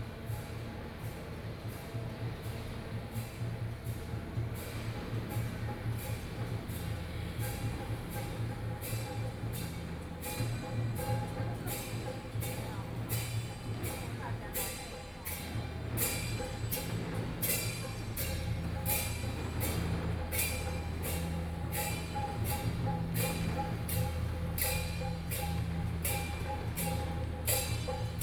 Yancheng, Kaohsiung - Traditional temple festivals
Traditional temple festivals, Firework, Local traditional performing groups, Sony PCM D50 + Soundman OKM II